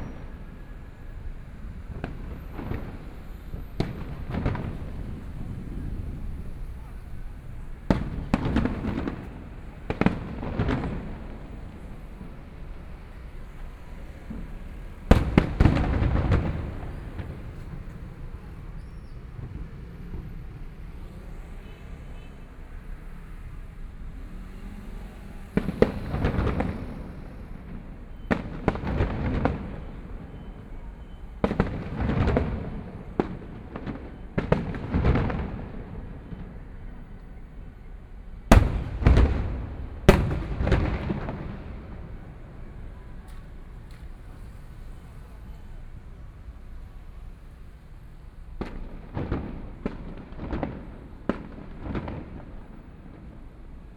Kaohsiung City, Taiwan - Fireworks sound
Fireworks sound, Traffic Sound, In the parking lot
Sony PCM D50+ Soundman OKM II